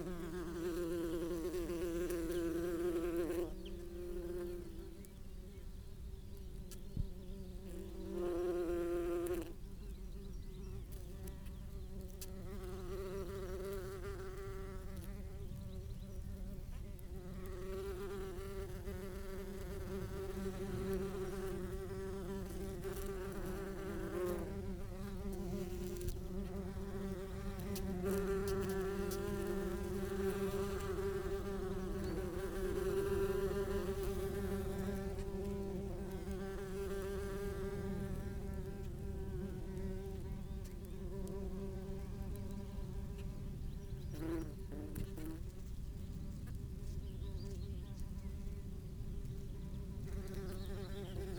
Luttons, UK - Open bees nest ...
Open bees nest ... bees nest had been opened ... by a badger ..? the nest chamber was some 15cm down ... placed my parabolic at the edge of the hole and kept as far back as the cable would allow ..! no idea of the bee species ... medium size bumble bees with a white rump ... obviously not the solitary type ... background noise ...